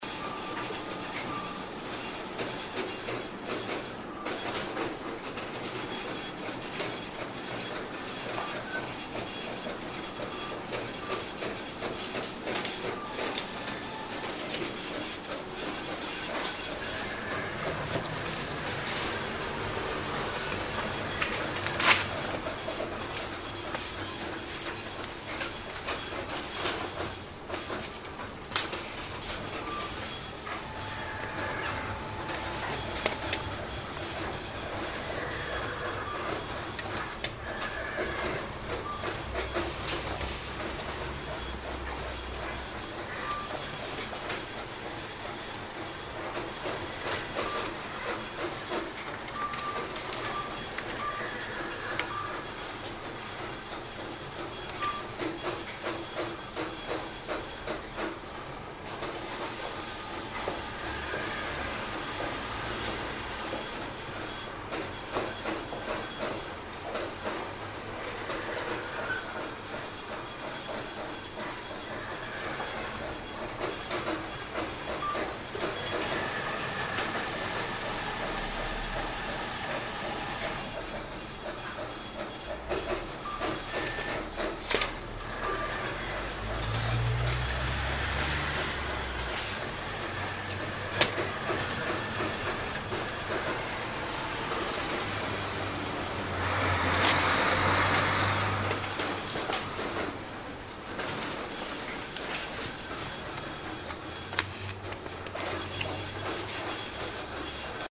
cologne, ubierring, sparkasse, automatenraum
soundmap cologne/ nrw
sparkasse morgens, automatenraum, menschen und maschinen
project: social ambiences/ listen to the people - in & outdoor nearfield recordings
26 May 2008, ~19:00